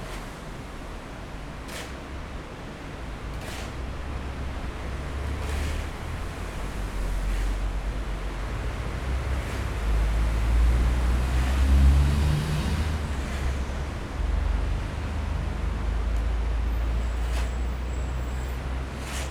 Wai'ao, Toucheng Township - Sitting on the coast
Sitting on the coast, Sound of the waves, Workers are mixing cement, Traffic noise behind, Binaural recordings, Zoom H4n+ Soundman OKM II